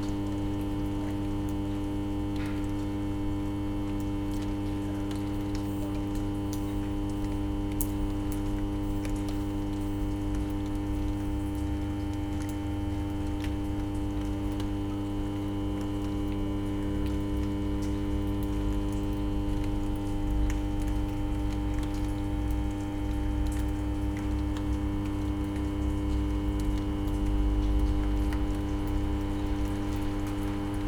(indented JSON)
{
  "title": "Švabiceva ulica, Ljubljana - hum of transformer station",
  "date": "2012-11-05 22:55:00",
  "description": "electric hum of transformer at a parking deck, dripping water",
  "latitude": "46.04",
  "longitude": "14.50",
  "altitude": "297",
  "timezone": "Europe/Ljubljana"
}